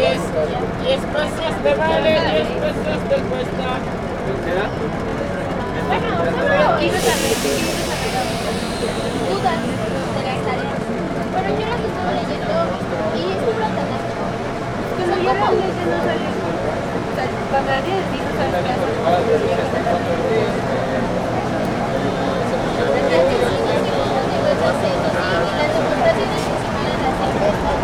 Metro, Mexico City, Federal District, Mexico - vagonero del metro